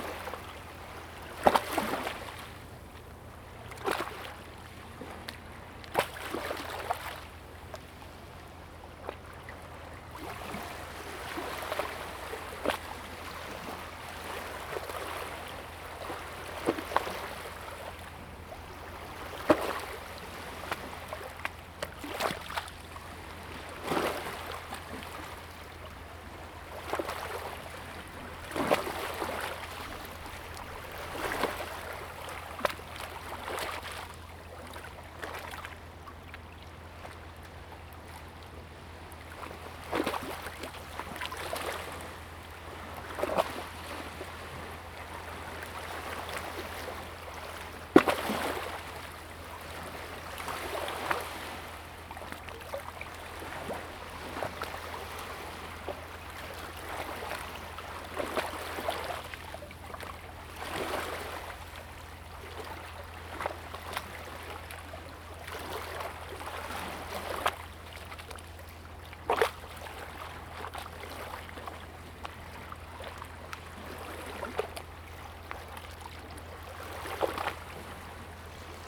杉福漁港, Liuqiu Township - Tide and Wave
Small fishing port, Tide and Wave, Small beach
Zoom H2n MS+XY